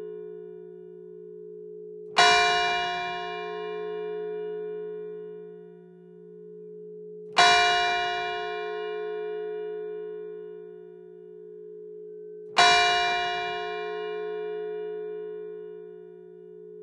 Rte de l'Église Saint-Martin, Montabard, France - Montabard - Église St-Martin
Montabard (Orne)
Église St-Martin
Le Glas